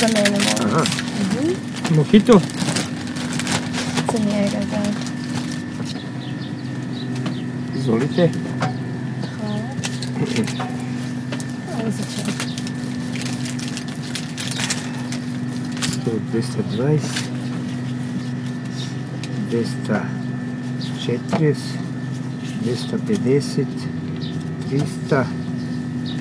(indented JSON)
{
  "title": "maj, (Ice cream guy) Belgrade - Prodavacica sladoleda (Ice cream guy)",
  "date": "2011-06-15 14:09:00",
  "latitude": "44.83",
  "longitude": "20.45",
  "altitude": "71",
  "timezone": "Europe/Belgrade"
}